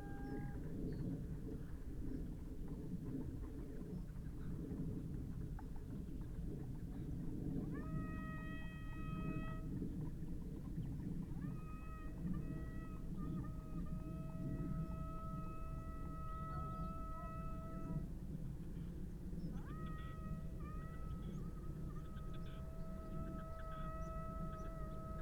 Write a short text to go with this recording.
horses and hounds ... parabolic ...